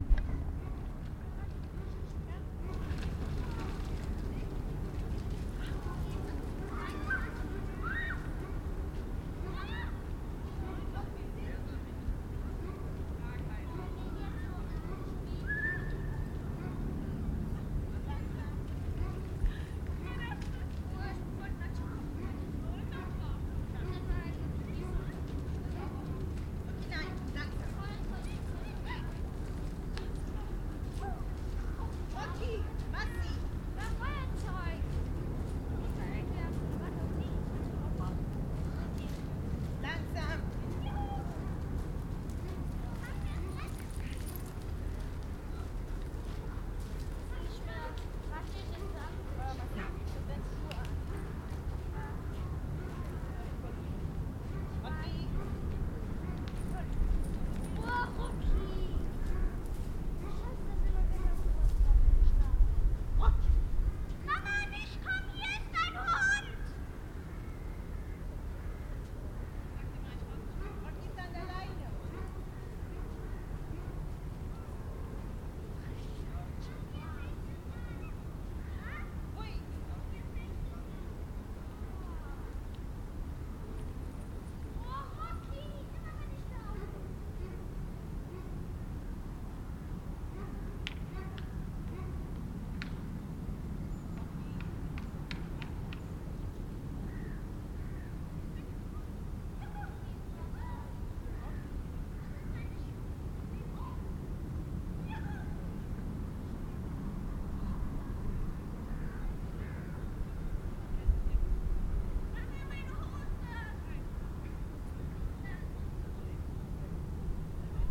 Langel Rheinufer, Köln, Deutschland - Eine Mutter mit Kind und Hund, im Hintergrund ein Schiff
Am Rheinufer, etwa 3-4 Meter oberhalb des Strandes aufgenommen. Eine Frau mit Kind und Hund spielen am Wasser. Im Hintergrund rauscht ein Rheinschiff.
This recording was done on the bank of the Rhine, about 3-4 meters above the beach. A woman with child and a dog are playing at the water. In the background a sounds a ship.
Cologne, Germany, 9 March